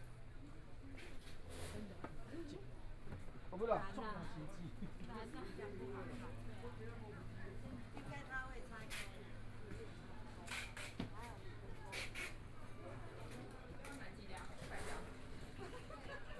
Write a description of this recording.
Walking through the fruit and vegetable market, Binaural recordings, Zoom H4n+ Soundman OKM II